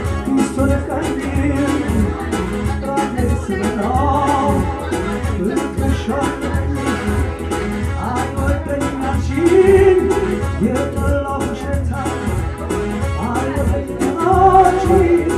Gola Sefer, Addis Ababa, Éthiopie - Music in Dashen traditionnel restaurant
At Dashen Bet, all evenings, two men and a woman interpret popular and traditional songs. In this sound, the most old man sings and dances (better than Tom Jones).
Au Dashen Bet, tous les soirs, deux hommes et une femme interprètent des chansons populaires et traditionnelles. Dans ce son, l' homme le plus vieux les chante et danse (mieux Que Tom Jones).